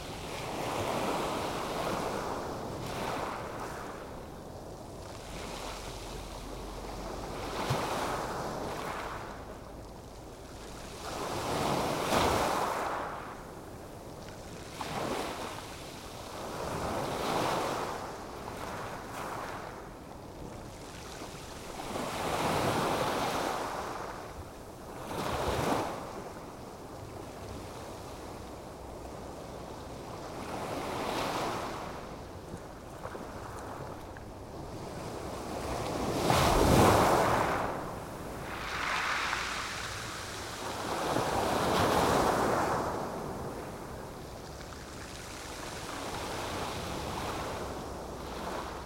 pebble beach near Poseidon Café, Palaiokastrites, Greece - ocean surf on pebble beach at palaiokastrites
Recorded on the pebble beach near Poseidon Café with a Sony PCM-M10